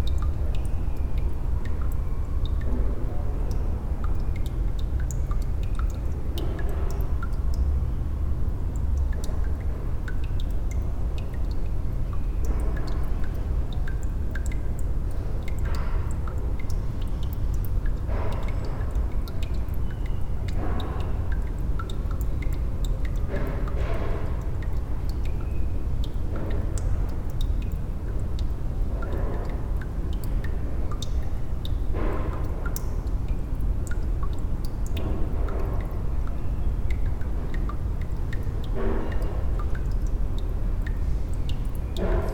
Saint-Josse-ten-Noode, Belgium - A dripping tap
At the end of the big glasshouse, just above one of the nice indoors pools, there is a dripping tap. So nice to listen to a tiny sound in this place full of massive sounds. Recorded just with EDIROL R-09.
België - Belgique - Belgien, European Union, 19 June 2013